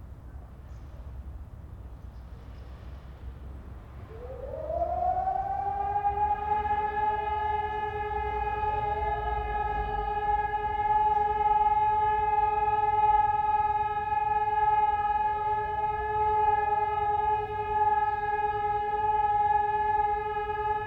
Köln, Maastrichter Str., backyard balcony - sirens testing
sirens testing in Köln, third phase, clear signal
(PCM D50, Primo EM172)